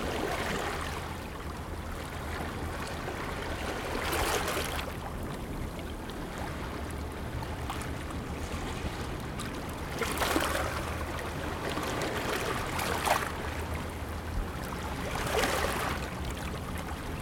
Επαρ.Οδ. Τήνου-Καλλονής, Τήνος, Ελλάδα - The Sound of Waves at seaside of Stavros
The Sound of Waves at Seaside of Stavros.
Recorded by the soundscape team of E.K.P.A. university for KINONO Tinos Art Gathering.
Recording Equipment: Ζoom Η2Ν
Tinos, Greece, 23 July 2018, 16:15